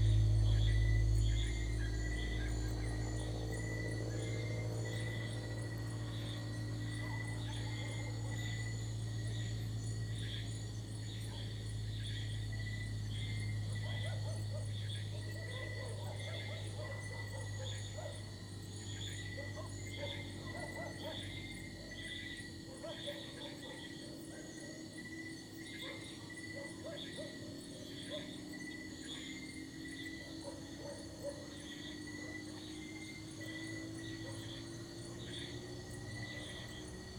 Iruhin East, Tagaytay, Cavite, Filippinerna - Tagaytay Iruhin East Valley #1
Sounds captured just before midnight by the valley along Calamba Road between Tagaytay Picnic Grove and People´s Park in the Sky. Birds, insects, lizards along with occasionally some tricycles, motorbikes and dogs barking. WLD 2016
Tagaytay, Cavite, Philippines, July 2016